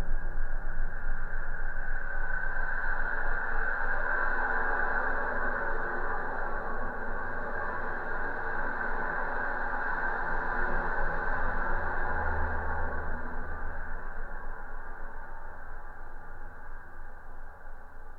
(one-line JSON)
{"title": "Jasonys, Lithuania, inner sounds of viaduct", "date": "2016-10-20 14:40:00", "description": "contact microphones on metalic constructions of abandoned viaduct", "latitude": "55.49", "longitude": "25.53", "altitude": "139", "timezone": "Europe/Vilnius"}